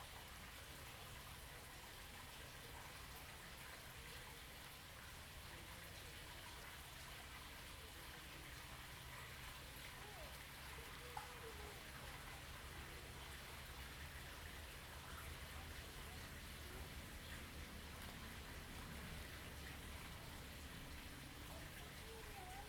碧雲寺竹林生態池, Hsiao Liouciou Island - Water sound
Water sound
Zoom H2n MS +XY
1 November 2014, ~15:00